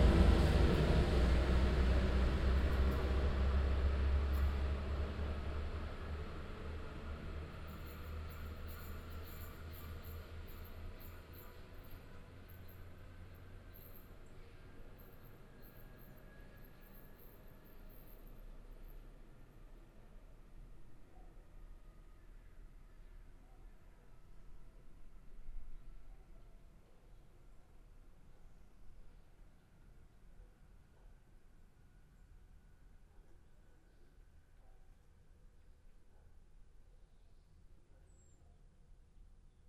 michelau, station
At the station of Michelau on a sunday morning. A train driving in, a few passengers enter the train, the train leaves the station again - the morning silence.
Michelau, Bahnhof
Am Bahnhof von Michelau an einem Sonntagmorgen. Ein Zug fährt ein, ein paar Fahrgäste steigen ein, der Zug fährt wieder aus dem Bahnhof heraus - die Morgenstille.
Michelau, gare
À la gare de Michelau, un dimanche matin. Un train entre en gare, quelques rares passagers montent dans le train le train quitte à nouveau la gare – le silence du matin
Project - Klangraum Our - topographic field recordings, sound objects and social ambiences